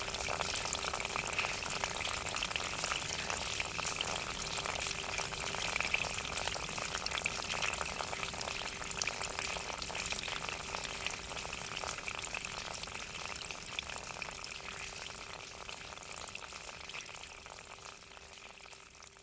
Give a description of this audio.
A constant rain is falling on La Rochelle this morning. Water is flowing out inside a gutter.